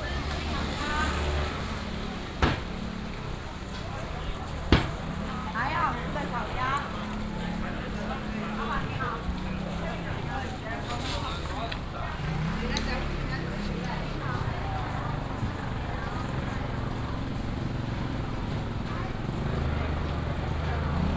Huamei Market, Xitun Dist., Taichung City - walking in the Evening market
walking in the Evening market, Traffic sound
29 April 2017, 18:22